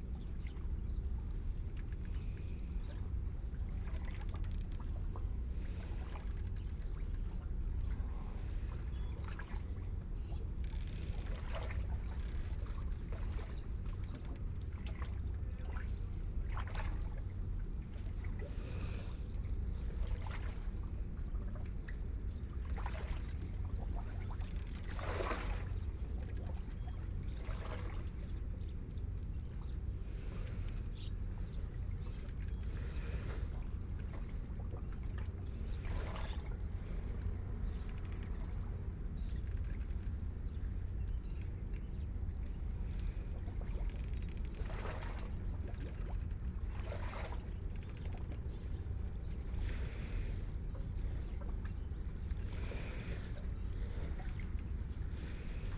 Kaohsiung City, Taiwan - Yacht
In the dock, Yacht, Birds singing